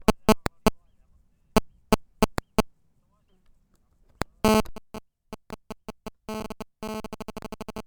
Valdidentro SO, Italia - mobile phone or not?